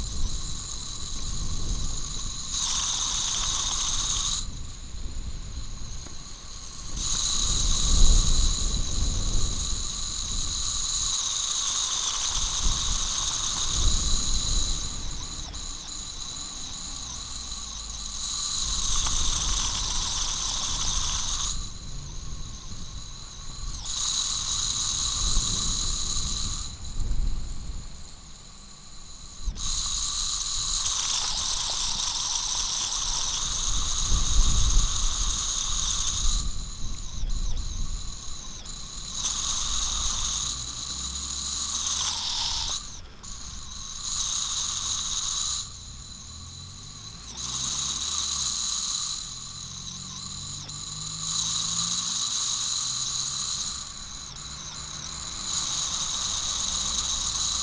Sasiono, Morska Street - faucet
hiss of a faucet attached to a pipe sticking out of the ground.
Poland, 17 August 2015, 14:03